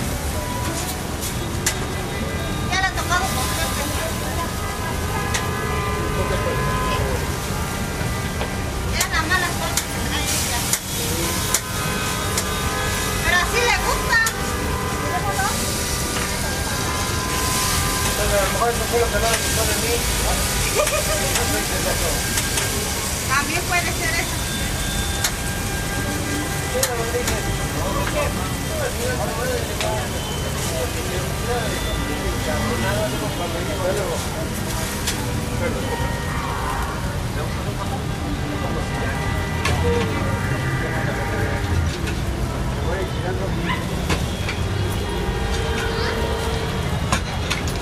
Colonia Centro, Cuauhtémoc, Mexico City, Federal District, Mexico - tortillas y cuernos
Tráfico intenso en la rotatória del Paseo de la Reforma, mientras cerca tostavan tortillas calientitas!